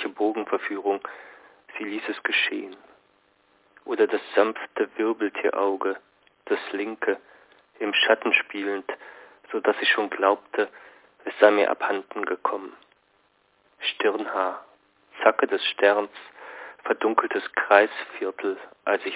an der wildbahn 33 - Sah höchstselbst die RUSSISCHE TÄNZERIN - in memoriam Hannah Höch - hsch ::: 23.04.2007 23:31:00